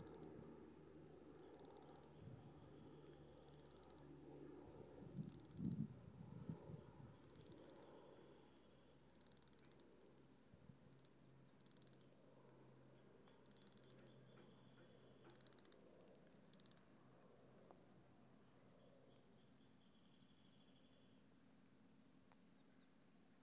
SMIP RANCH, D.R.A.P., San Mateo County, CA, USA - Clicks